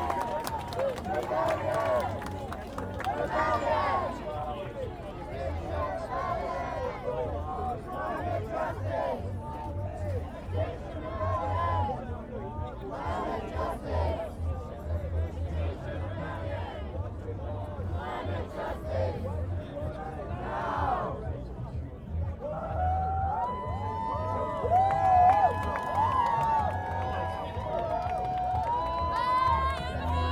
The Riverfront, BFI Southbank, London, United Kingdom - Extinction Rebellion easter 2019: Crowd reacts to arrests
Every now and again police squads about 10 strong move in to make arrests of those sitting down blocking the bridge. Individual demonstrators are read their rights and if they refuse to move carried off by their arms and legs. There is no big confrontation. It is as non-violent as can be given the circumstances. The crowd chants throughout the process. Each person is cheered and clapped as they are arrested and taken to the nearby police vans. I can only watch in admiration at their commitment and determination.